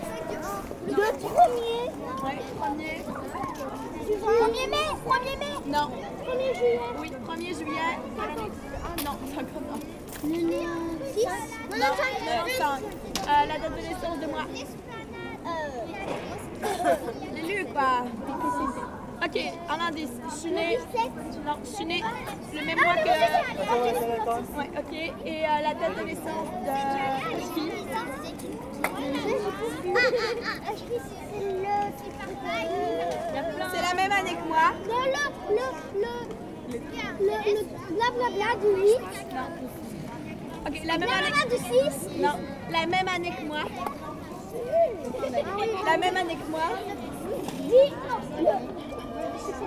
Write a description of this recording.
Young girl-scouts are playing on the principal square of this city. At 16h00 exactly, the chime is ringing. It's an old traditional song called La Petite Gayolle.